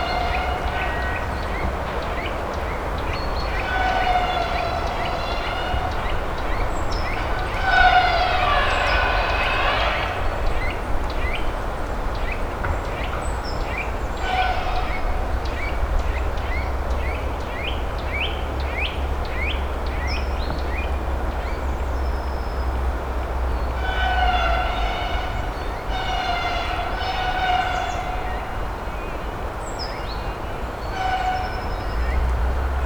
forest Morasko - whine of a buzz saw
whine of a buzz saw sounding in the forest. (sony d50)
Poznań, Poland, 17 March 2016